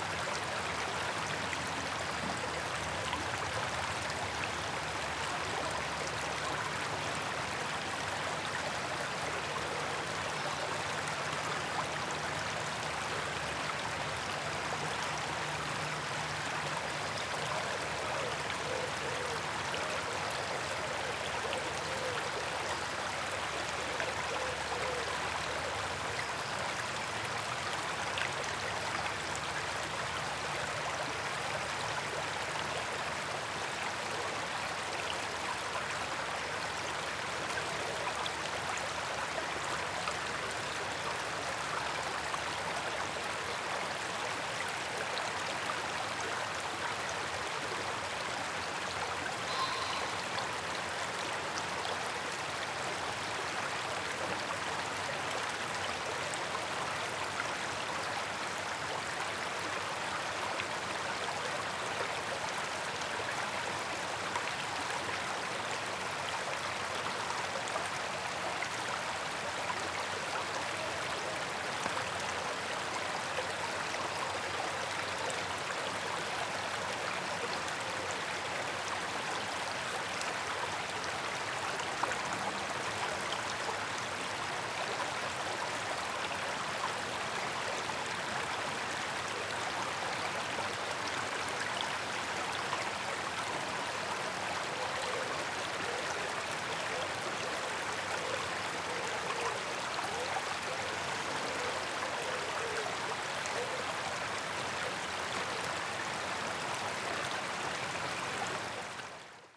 Walking Holme Digley Beck
Beautiful wooded part of the river. Some kids playing in the background.